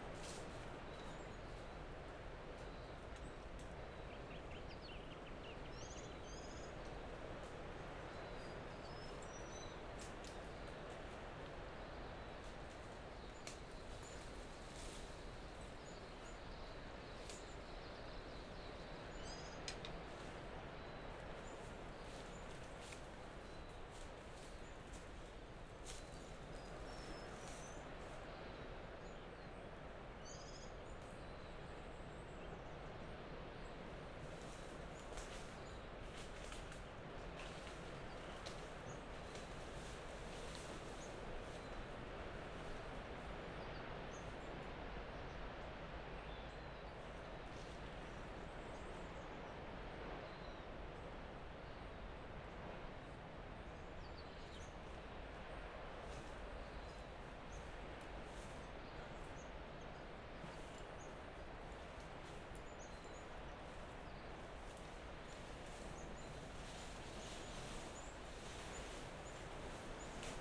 Royal National Park, NSW, Australia - Picking up my microphone in the coastal forest in the morning
A few minutes of the morning ambience as you slowly start to hear me enter up the hillside to collect my recording. A quick check of the recorder, it worked? Yes! (I've come to pick up my recorder before only to find that I didn't set it up properly and it only recorded a few hours which has been very dissapointing!)
Recorded with an AT BP4025 into a Tascam DR-680.
BixPower MP100 was used as an external battery, it still had about half it's battery life left when I picked it up the next morning.